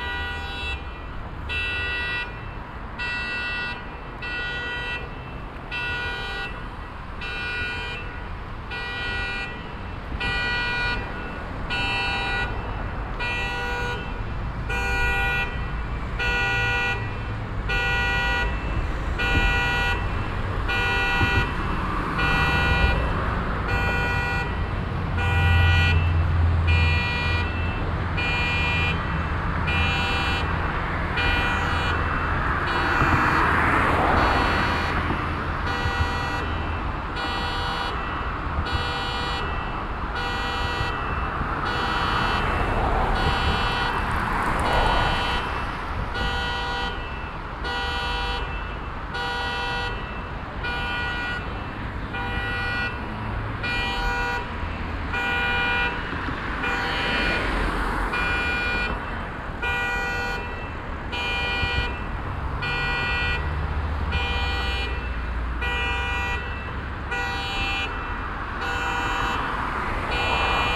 vancouver, granville bridge, car alarm in parking area
in the night on the bridge, a car alarm starts as a transporter tries to hook it away from the parking lot. traffic passing by.
soundmap international
social ambiences/ listen to the people - in & outdoor nearfield recordings